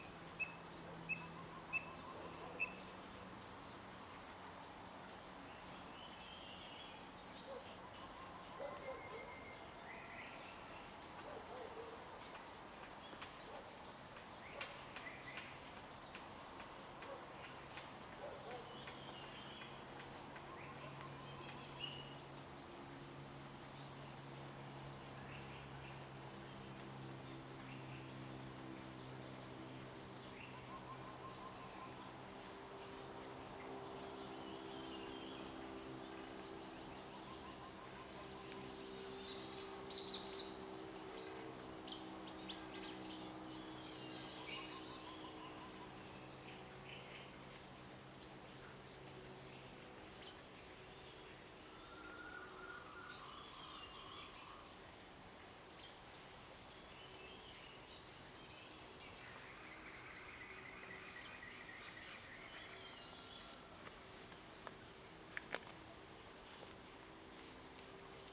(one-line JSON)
{"title": "水上巷, 埔里鎮桃米里, Nantou County - Morning in the mountains", "date": "2016-03-26 06:39:00", "description": "Morning in the mountains, Bird sounds, Traffic Sound, Frogs chirping\nZoom H2n MS+XY", "latitude": "23.94", "longitude": "120.92", "altitude": "525", "timezone": "Asia/Taipei"}